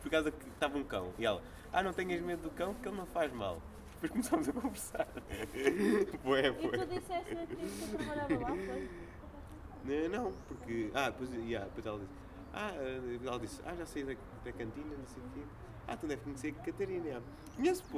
ESAD, Caldas da Rainha, Portugal - Students conversation
Recorded with a Zoom H4. In this clip you can hear the sounds of students talking at ESAD (Escola Superior de Artes e Design), at Caldas da Rainha. This school is surrounded by a lot of trees and nature. The recording took place at a balcony, in the afternoon of a cloudy day.